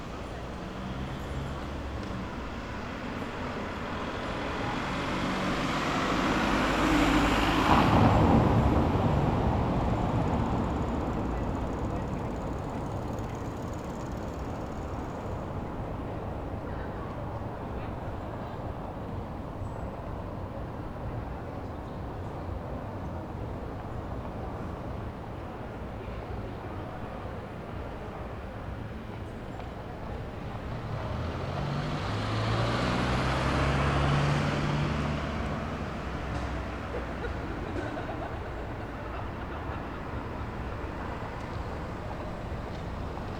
Berlin: Vermessungspunkt Friedelstraße / Maybachufer - Klangvermessung Kreuzkölln ::: 27.06.2010 ::: 01:33